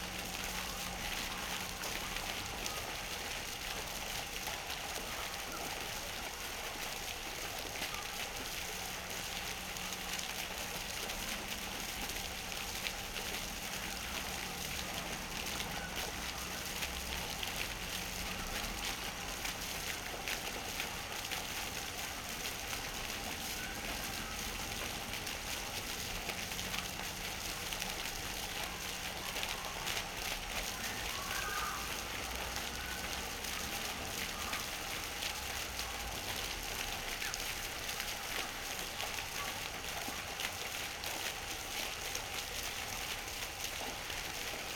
Sq. Emile Mayrisch, Esch-sur-Alzette, Luxemburg - fountain
fountain at Sq. Emile Mayrisch, Esch-sur-Alzette, schoolkids in the background